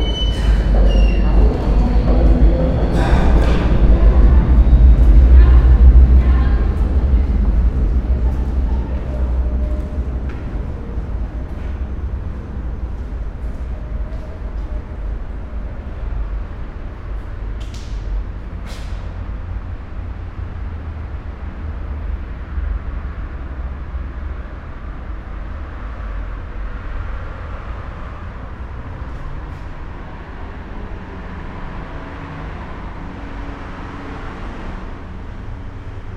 Marienkirche, Berlin, Germany - church belfry
at the site of dance of death
19 May, 21:22